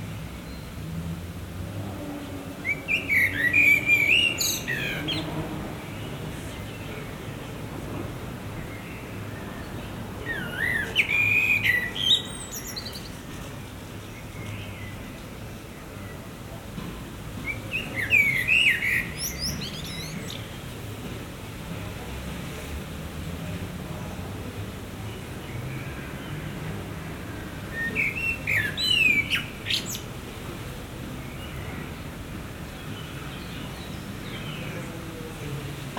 La Fonderie, Molenbeek-Saint-Jean, Belgique - Blackbird at night
Tech Note : Ambeo Smart Headset binaural → iPhone, listen with headphones.
Région de Bruxelles-Capitale - Brussels Hoofdstedelijk Gewest, België / Belgique / Belgien, 2 June, 21:52